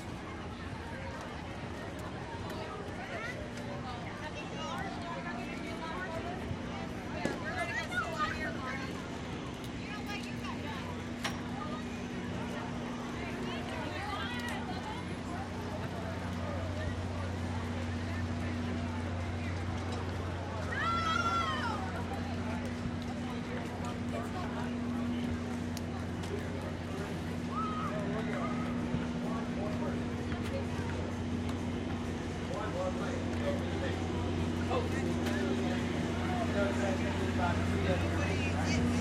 Rides, games and carnival barkers, stationed northwest of the grandstand. Stereo mics (Audiotalaia-Primo ECM 172), recorded via Olympus LS-10.